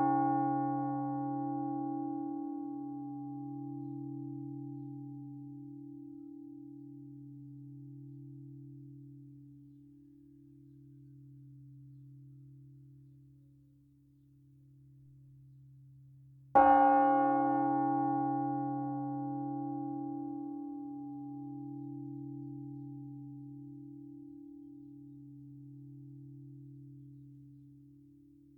Rue de l'Église, Flines-lès-Mortagne, France - Flines-Lez-Mortagne (Nord) - église
Flines-Lez-Mortagne (Nord)
église - Tintement manuel cloche grave